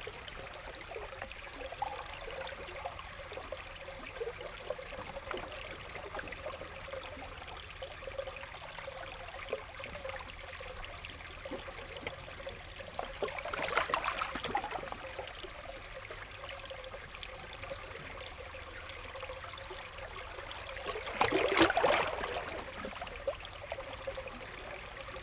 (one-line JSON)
{"title": "Greece, Sifnos - Sifnos Water", "date": "2015-08-13 08:30:00", "description": "stereo recording (AT8022, Tascam DR40) of the spot where a stream flows into the sea. wave lapping on the rocks on the right channel, stream sounds on the left.", "latitude": "36.98", "longitude": "24.74", "altitude": "13", "timezone": "Europe/Athens"}